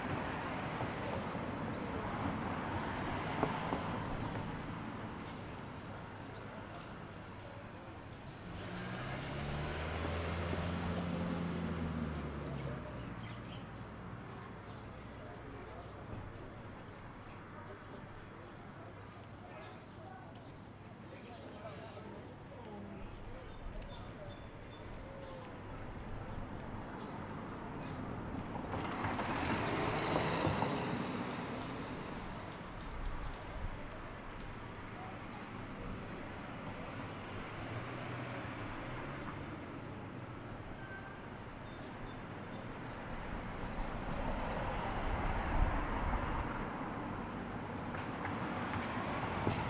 Tel Aviv-Yafo, Israel - Main street around 12pm
Street, Car pass, Murmur, Birds
March 2016